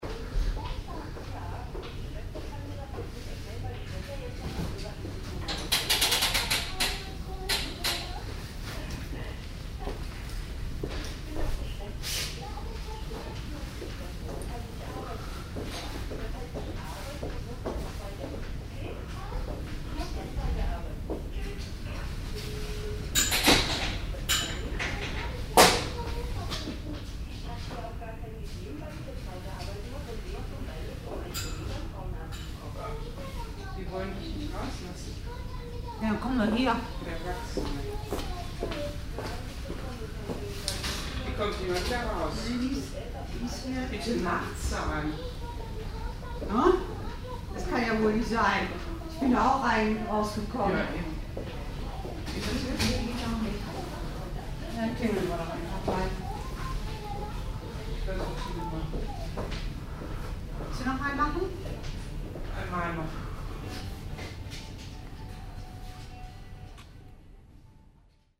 aufnahme im foyer des bades
project: : resonanzen - neanderland - social ambiences/ listen to the people - in & outdoor nearfield recordings
hallenbad am lavalplatz, 21 April, 17:45